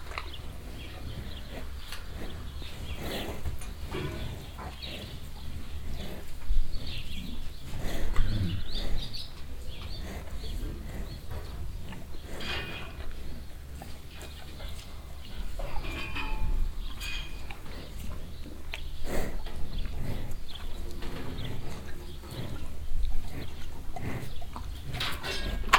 wahlhausen, cowshed, trough
Evening Time at a cowshed. Many Cows standing close by close at a trough. The sound of mouthes and tongues plus some metallic rattling of the trough as the cows move.
Wahlhausen, Kuhstall, Bottich
Am Abend bei einem Kuhstall. Viele Kühe stehen nah beieinander an einem Bottich. Das Geräusch ihrer Mäuler und Zungen sowie ein metallenes Rattern der Bottiche, wenn die Kühe sich bewegen.
Wahlhausen, étable à vaches, abreuvoir
Le soir dans une étable à vaches. De nombreuses vaches concentrées autour d’un abreuvoir. Le bruit des bouches et des langues plus le raclement métallique de l’abreuvoir quand les vaches bougent.
Project - Klangraum Our - topographic field recordings, sound objects and social ambiences
Hosingen, Luxembourg, 11 July, 14:06